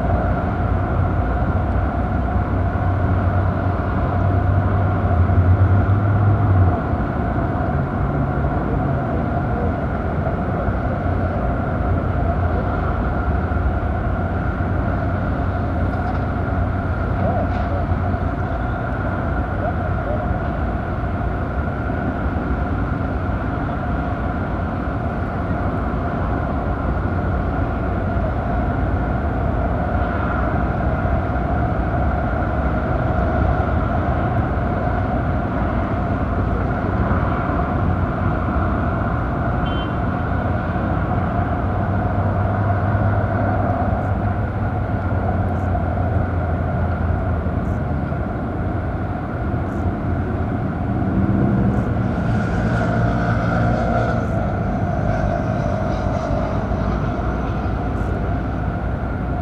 Maribor, Slovenia - one square meter: holes in the wall
holes is the concrete wall that forms one border of our recording space add their own resonance to the soundscape. all recordings on this spot were made within a few square meters' radius.
28 August 2012, ~15:00